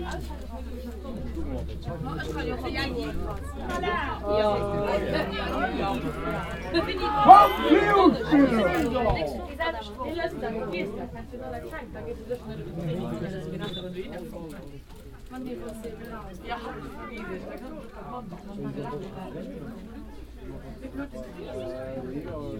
hosingen, soccer field
At the villages soccer field during the second half of a game with two local football teams.. The sound of the referee pipe, ball attacks, a foul and conversations of the local fans and visitors.
Hosingen, Fußballfeld
Beim Fußballfeld des Ortes während der zweiten Halbzeit eines Spiels mit zwei regionalen Fußballmannschaften. Das Geräusch der Pfeife des Schiedsrichters, Ballangriffe, ein Foul begleitet vom Unterhaltungen und Kommentaren der lokalen Fans und Zuschauer.
Hosingen, terrain de football
Sur le terrain de football du village durant la seconde mi-temps d’un match entre deux équipes locales. On entend le sifflet de l’arbitre, des attaques de balles, une faute et les conversations des supporters locaux et des visiteur